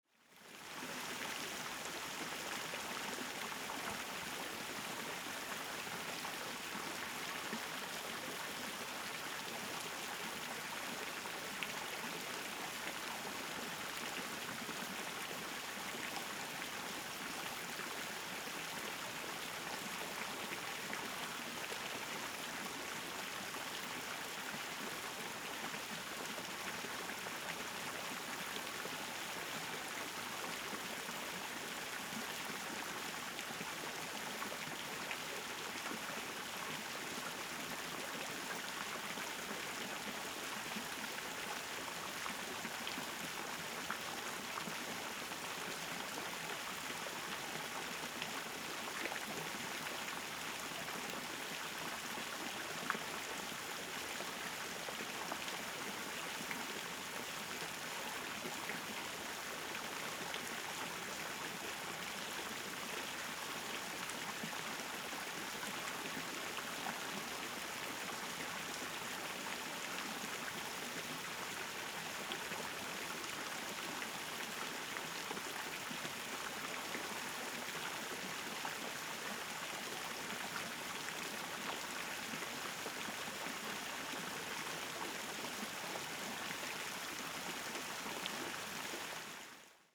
Residenzbrunnen, Salzburg, Österreich - Brunnenspeier 1
Der Brunnen hat 4 Speier. Jeder Speier klingt anders.
Salzburg, Austria, 17 April, ~22:00